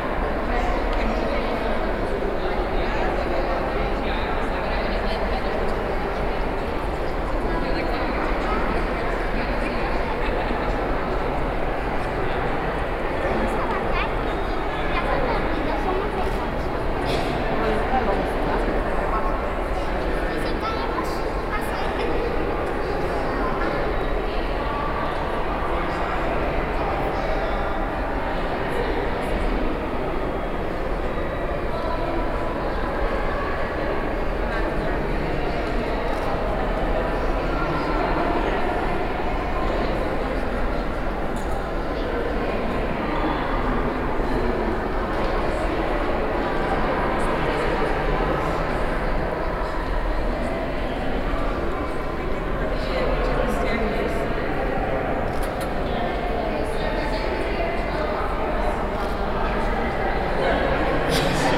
Austin, Texas State Capitol, Third Floor under the dome
USA, Texas, Austin, Capitol, Dome, binaural